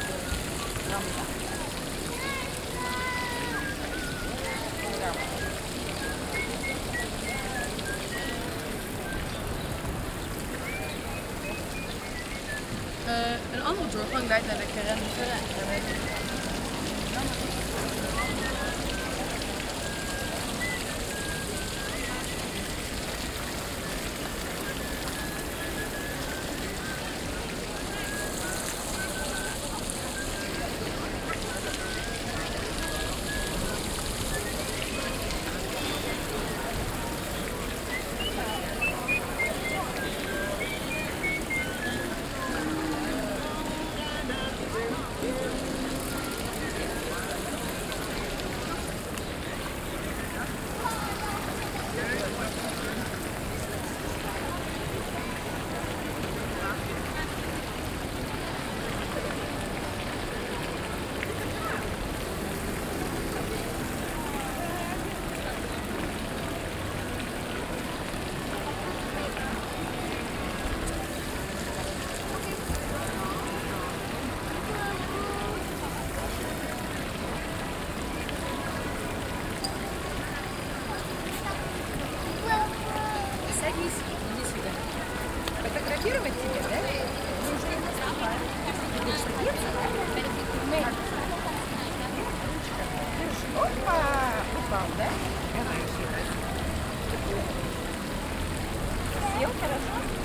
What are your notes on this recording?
Water recording made during World Listening Day.